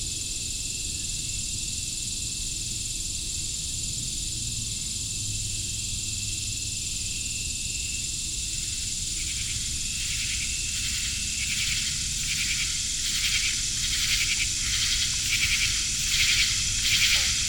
Cicadas, katydids and green frogs highlight this one-evening compilation of field recordings collected at a wildlife management area.